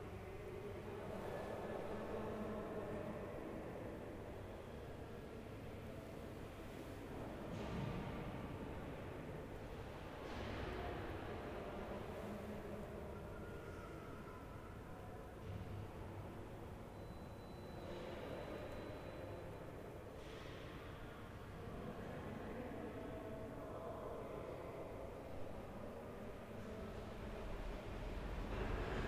Nossa Senhora do Pópulo, Portugal - Crazy Echoes
Recorded with a ZoomH4N. No distinguishable voices, only echoes.
3 March 2014, 17:18